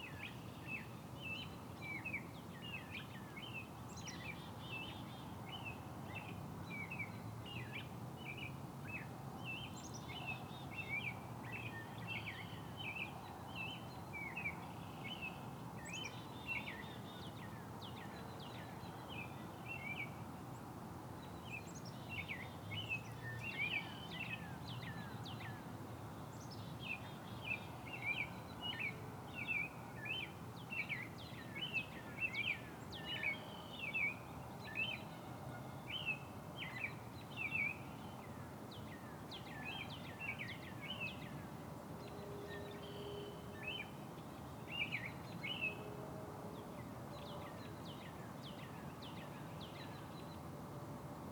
Backyard sounds on a spring evening. A lot of birds can be heard as well as some aircraft, passing cars, and the neighbor kid on a trampoline.
Minnesota, United States